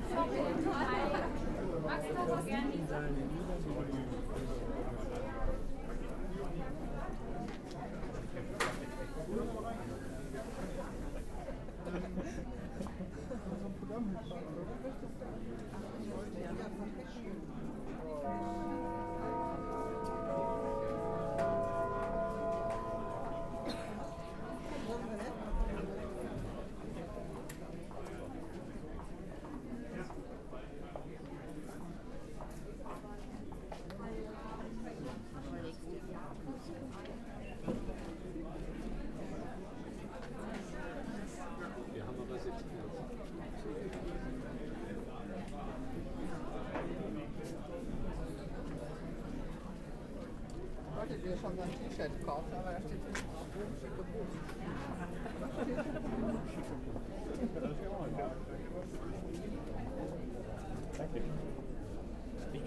bochum, schauspielhaus, audience arriving
in front of the "kammerspiele" (i. e. the intimate theatre of the bochum schauspielhaus).
recorded june 23rd, 2008 before the evening show.
project: "hasenbrot - a private sound diary"